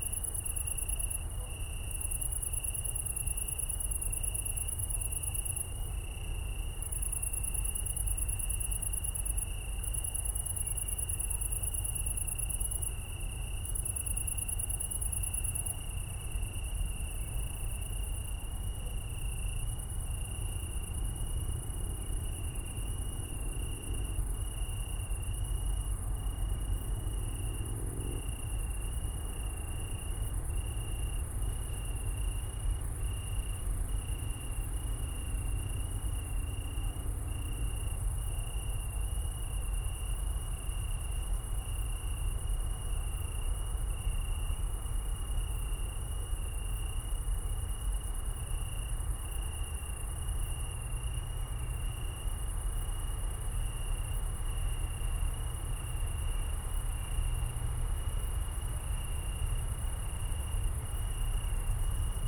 Rheinufer / An der Schanz, Köln, Deutschland - Italian tree crickets, traffic
Köln, at the river Rhein, italian tree crickets, other crickets, cat traffic, drone of a ship passing-by
World Listening Day 2019
(Sony PCM D50, DPA4060)
Regierungsbezirk Köln, Nordrhein-Westfalen, Deutschland, 18 July 2019